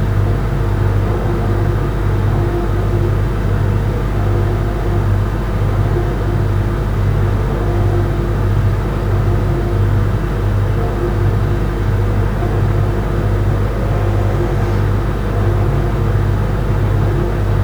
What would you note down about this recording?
Part of my daily practice of listening not only outwards, but also inwards, towards my immediate surrondings. This is a room tone take of the sound of my kitchen.